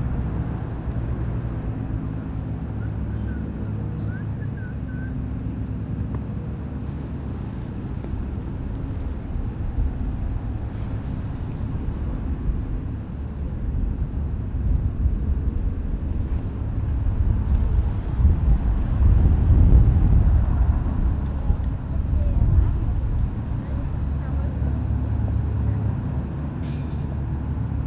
leipzig lindenau, lindenauer markt
am lindenauer markt. straßenbahnen und autos, im hintergrund schwatzende kinder.
Leipzig, Deutschland, 1 September 2011